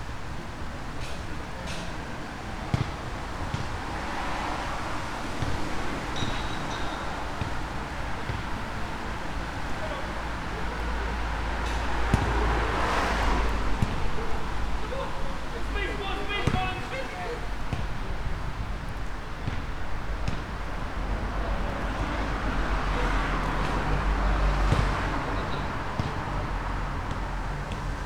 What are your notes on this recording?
men playing basketball late in the evening. a different group talking nearby. (sony d50)